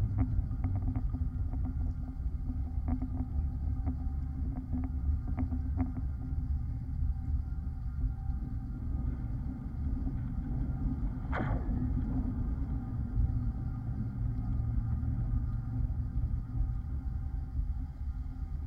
{"title": "Kaliningrad, Russia, long supporting wire", "date": "2019-06-07 11:35:00", "description": "contact microphone on a long supporting wire of bridge's construction", "latitude": "54.71", "longitude": "20.51", "altitude": "2", "timezone": "Europe/Kaliningrad"}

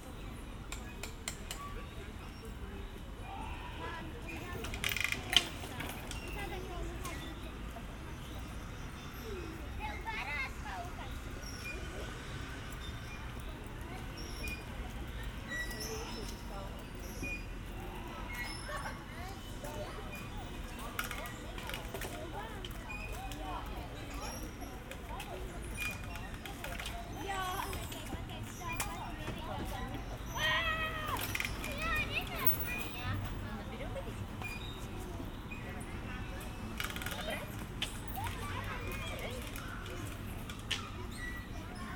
{"title": "Ventspils, Latvia, kids park", "date": "2021-07-14 20:05:00", "description": "Evening in Ventspils KIds Park. Sennheiser ambeo headset recording", "latitude": "57.39", "longitude": "21.55", "altitude": "13", "timezone": "Europe/Riga"}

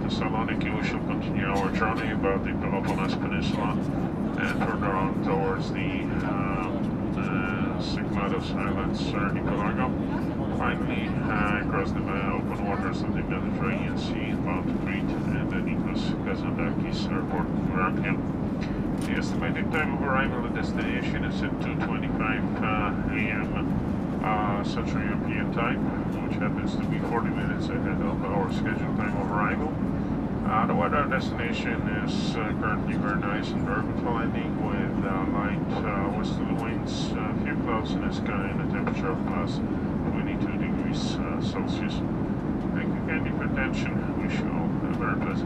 {"title": "Airspace over Hungary - flight info update", "date": "2012-10-04 00:31:00", "description": "the pilot updating the passengers about the flight details in English.", "latitude": "47.72", "longitude": "18.97", "altitude": "443", "timezone": "Europe/Budapest"}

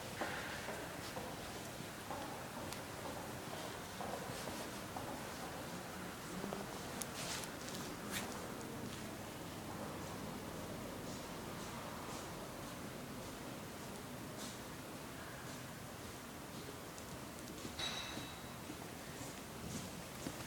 {"title": "Haupteingang Arkaden, NAWI Salzburg, Austria - Haupteingang Arkaden", "date": "2012-11-13 10:34:00", "description": "kommen und gehen", "latitude": "47.79", "longitude": "13.06", "altitude": "426", "timezone": "Europe/Vienna"}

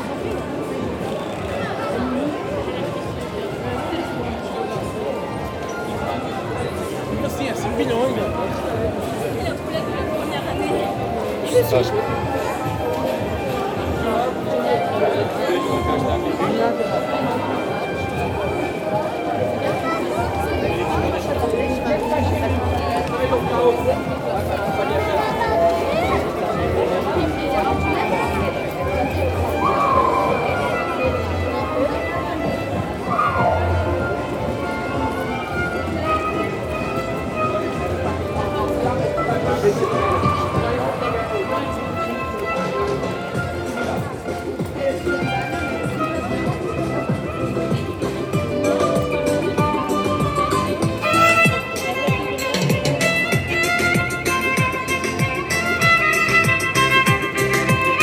{"title": "Bruxelles, Belgium - The commercial artery", "date": "2018-08-25 13:55:00", "description": "The awful rue Neuve ! Long and huge commercial artery, henceforth the same as all cities. Crowded with walkers, bad street musicians, people who enjoy the sun and feel good.", "latitude": "50.85", "longitude": "4.35", "altitude": "18", "timezone": "GMT+1"}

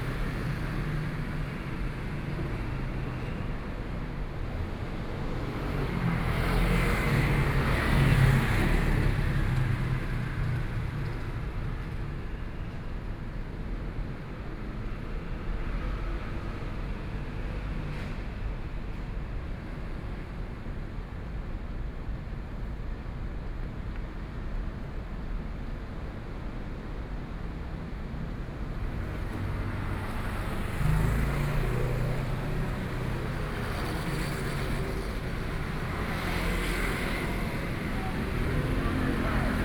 {"title": "Hsinchu City, Taiwan - Traffic Noise", "date": "2013-09-24 18:19:00", "description": "Train traveling through, Traffic Noise, Sony, PCM D50 + Soundman OKM II", "latitude": "24.80", "longitude": "120.98", "altitude": "26", "timezone": "Asia/Taipei"}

